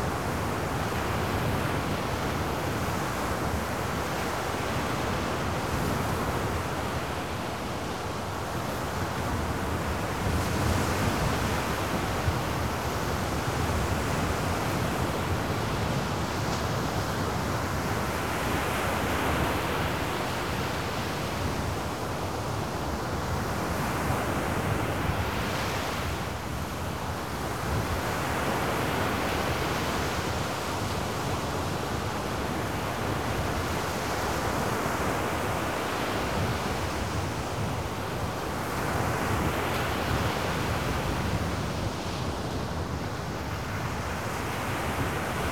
Sasino, at the beach - wind surge
high waves blasting on the shore, pleasant wind gusts accompanying